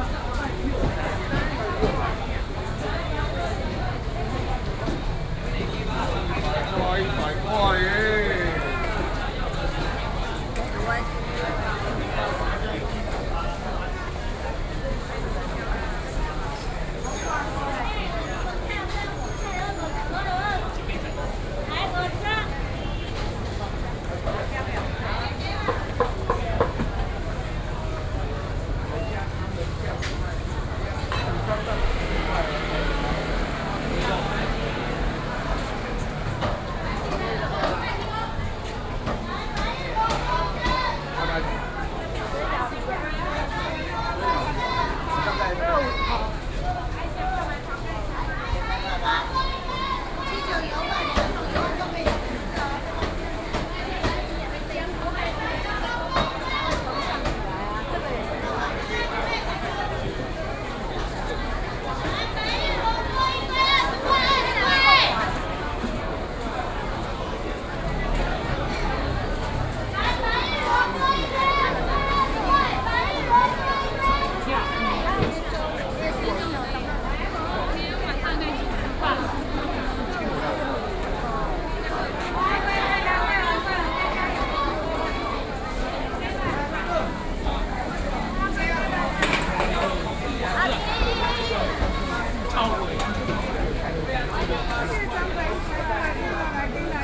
秀美市場, 新莊區, New Taipei City - Traditional marke
Traditional vegetable market, Binaural recordings, ( Sound and Taiwan - Taiwan SoundMap project / SoundMap20121115-29 )
15 November 2012, 17:59